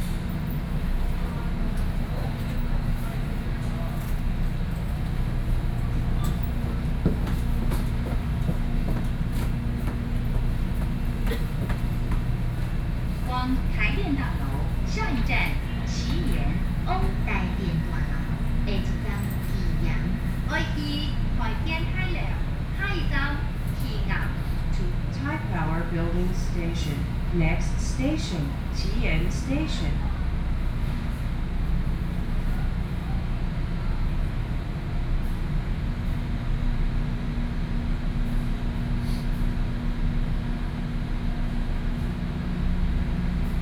Taipei, Taiwan - At MRT stations